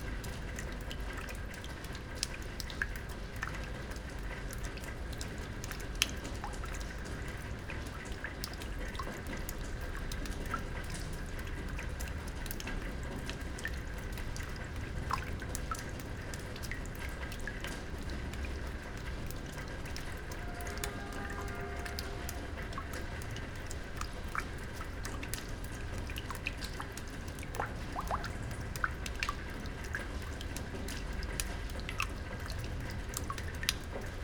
Muzej norosti, Museum des Wahnsinns, courtyard, Trate, Slovenia - rain, temporary fontain, train
Zgornja Velka, Slovenia, 20 June 2015